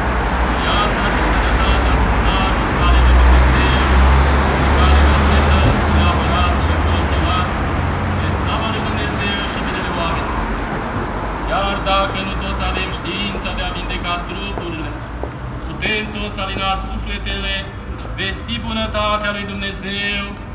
Central Area, Cluj-Napoca, Rumänien - Cluj, greek catholic church, sunday prayer
Standing on the street in front of the greek-catholic church on a sunday morning. The sound of the sunday prayer amplified through two slightly distorted outdoor speakers while the traffic passes by on the street.
international city scapes - topographic field recordings and social ambiences
Romania, 18 November 2012, 11:50